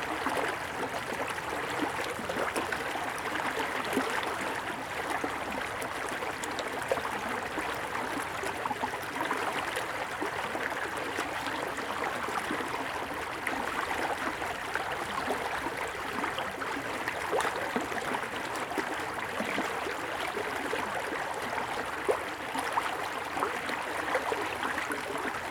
Wentbridge, West Yorkshire, UK - River Went (near Wentbridge)
The senseless babbling of the River Went, near Wentbridge. Although the river was fairly low the water was moving very quickly.
(rec. Zoom H4n)